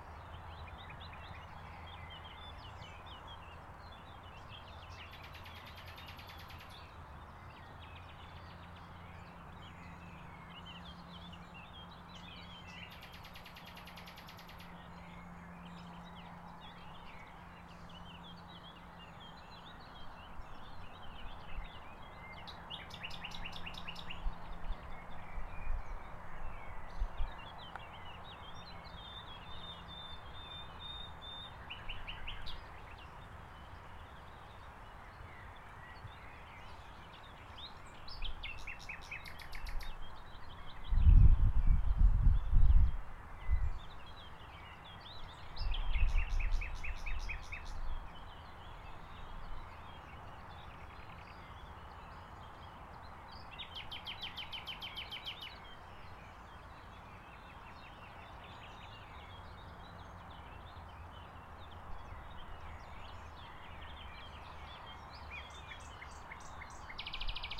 Strassengeräusch, Rhein und Vogelstimmen beim Isteiner Klotz